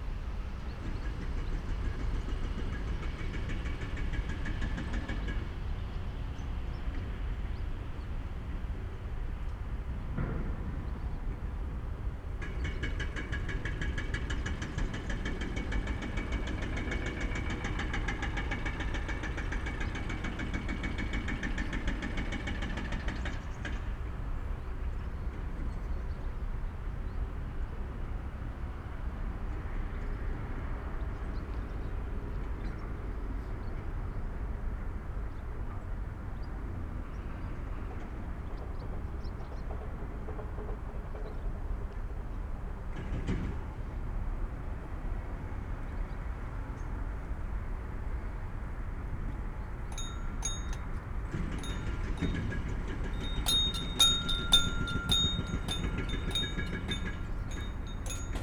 allotment, Neukölln, Berlin - de/construction works
all shacks and allotment houses are gone now, machines are deconstruction former concrete structures, preparing the ground for the A100 motorway
(Sony PCM D50, DPA4060)